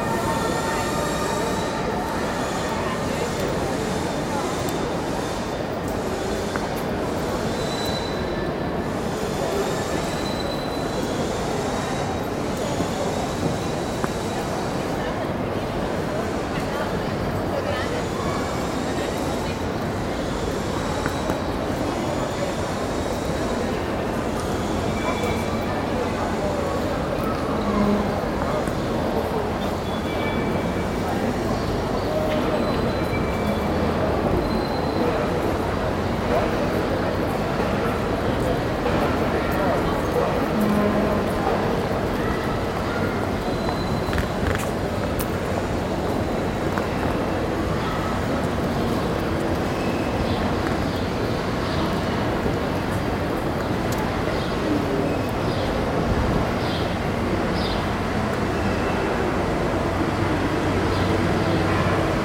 zurich main station, hall
recorded june 16, 2008. - project: "hasenbrot - a private sound diary"
Zurich, Switzerland